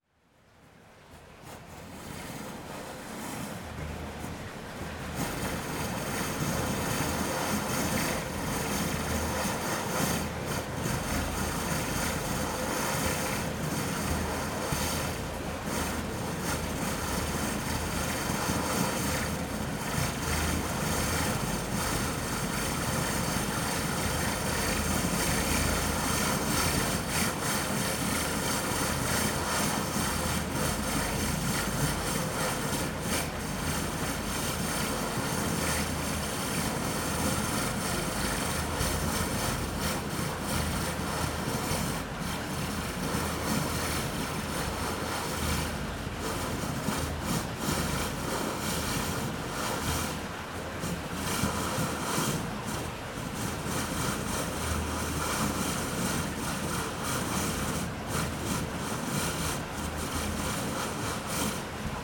Ottange, France - Pulsed water
In an undeground iron mine, we found a pipe routing water with very high pressure. There was a hole in the pipe, because everything is rusted. Cyclically, high pressure causes geysers. This makes a quite anxiety ambience.